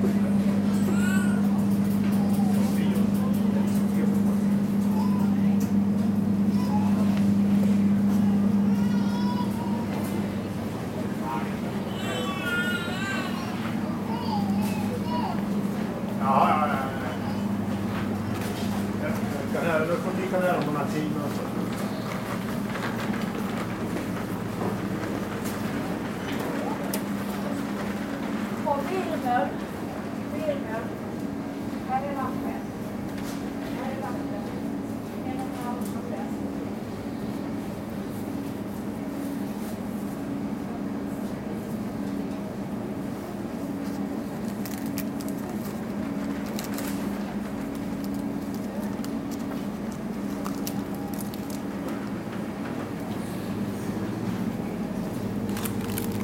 ekholmen, supermarket - ekholmen, supermarket (2)
Linköping, Sweden